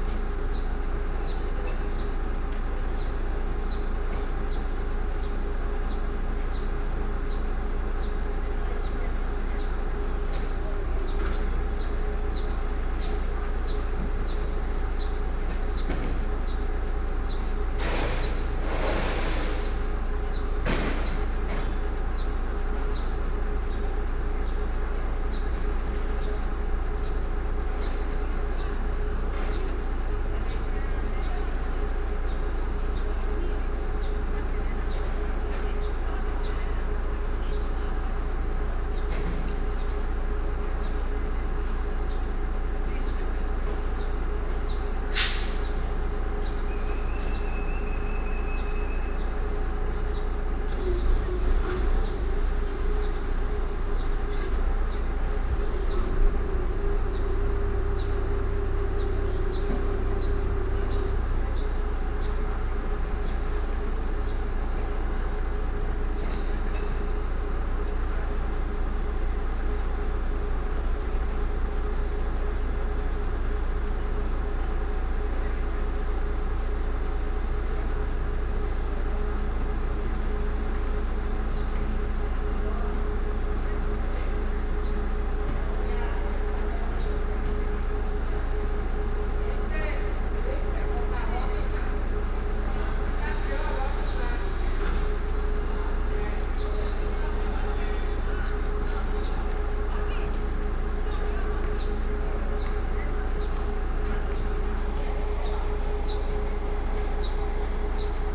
Beitou, Taipei - Construction
Construction, Sony PCM D50 + Soundman OKM II